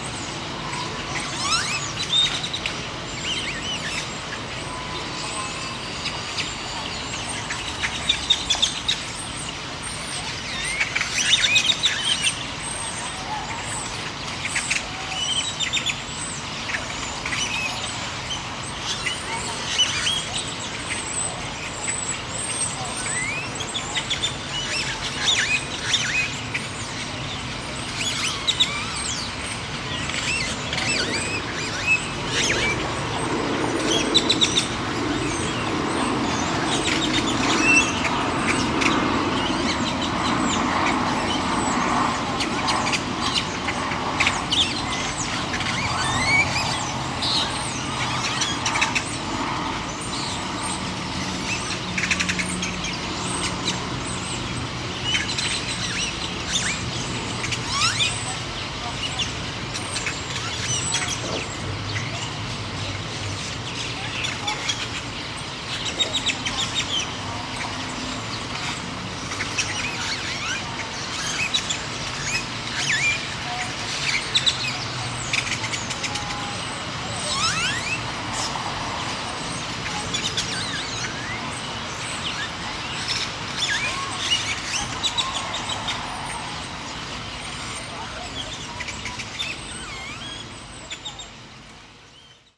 Windsor Park, Austin, TX, USA - Purple Martins at Capital Plaza
Recorded from the sunroof of a 1991 Volvo 940 to cut back on wind noise with a Marantz PMD661 and a pair of DPA 4060s.
7 August 2015, 20:30